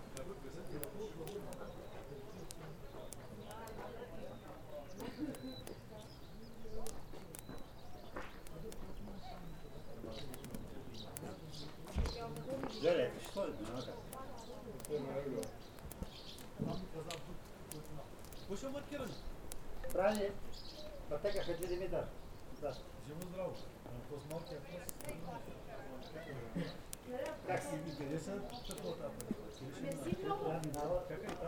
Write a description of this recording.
In front of the ruin of the monument of Buzludzha there are swallows to be heard, water drops falling from the construction, voices of visitors and the policeman, who looks that noone enters the building. Two workers who paint a hiking trail pass by and draw their mark on the building.